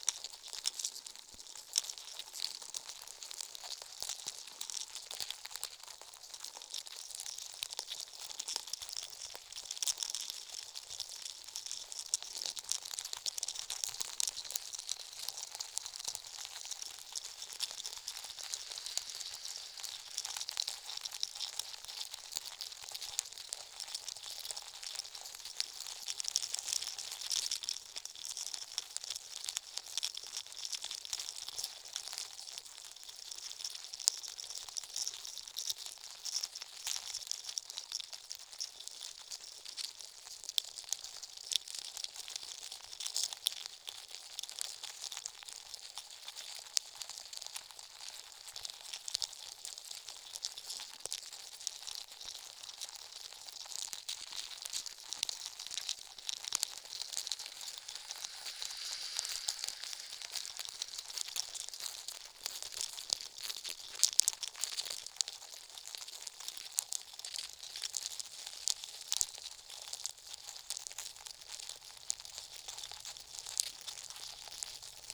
개미 집_Large black ants nest
(No ants nor humans were killed or injured during the making of this recording!)